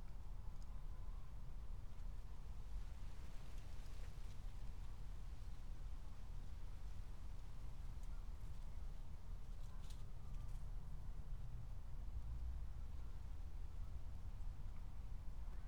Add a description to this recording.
22:03 Berlin, Tempelhofer Feld